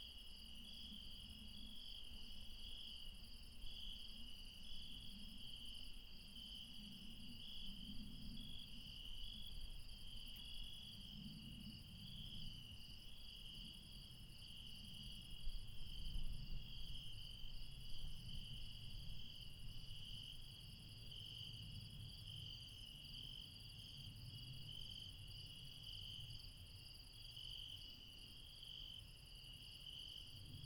Refuge Krevatia, Μπαρμπαλά, Dion, Greece - Late night mountain insects